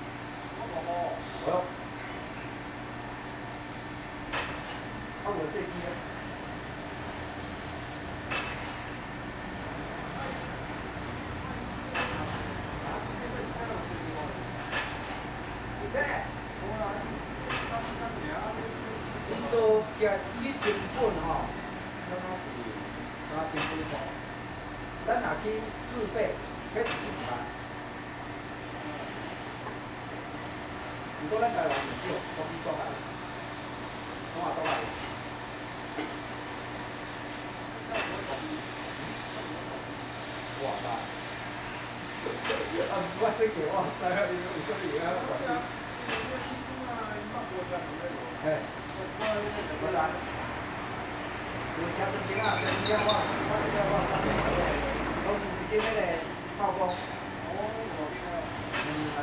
永盛公園, Sanchong Dist., New Taipei City - in the Park
in the Park, Removal packing, Traffic Sound, There came the sound of small factories nearby
Sony Hi-MD MZ-RH1 +Sony ECM-MS907
New Taipei City, Taiwan, 10 February